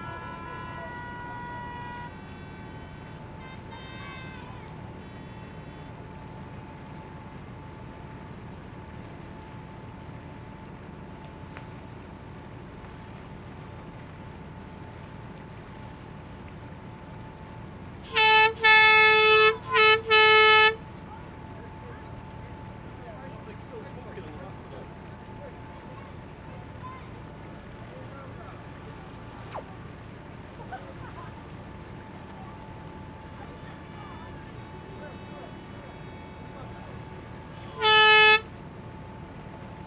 I met a fisherman called Dave who invited me out onto his boat to record a pro-Leave demonstration that he was going to be part of. A fleet of local fishing boats did a couple laps around the Sound before sitting by the waterfront for a couple of minutes to make some noise.
Plymouth, UK